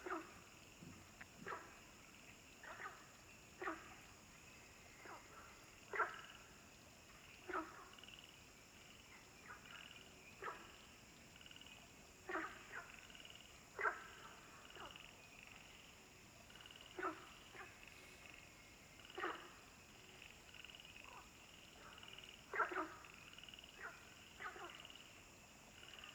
Nantou County, Taiwan - In the pool
Ecological pool, In the pool, Frogs chirping, Bird sounds
Zoom H2n MS+XY
19 April 2016, ~19:00